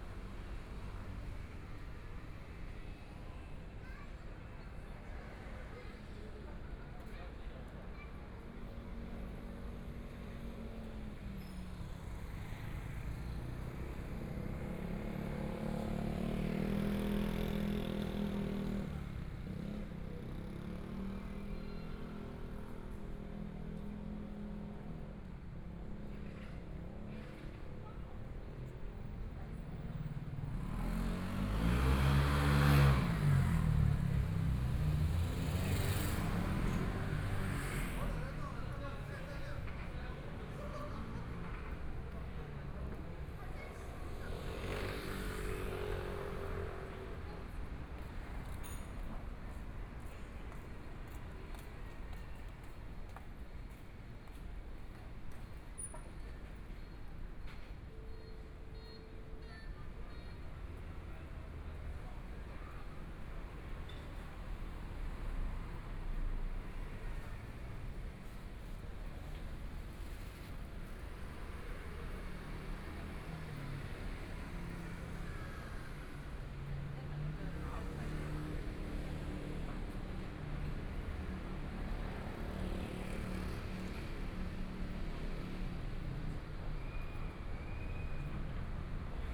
Yitong St., Zhongshan Dist. - In the Street
Walking on the road, Environmental sounds, Construction noise, Motorcycle sound, Traffic Sound, Binaural recordings, Zoom H4n+ Soundman OKM II
6 February 2014, 17:24